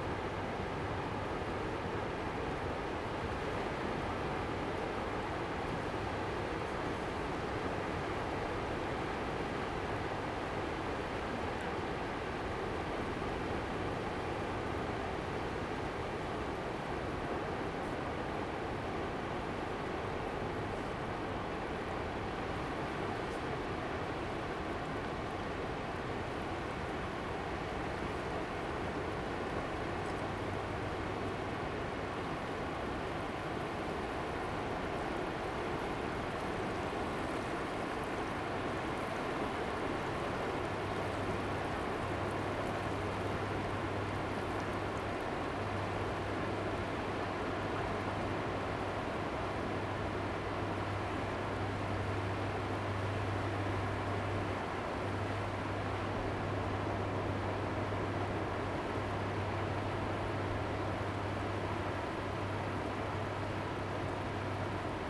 白沙屯漁港, Tongxiao Township - On the beach of the fishing port
On the beach of the fishing port, Sound of the waves, Fireworks sound
Zoom H2n MS+XY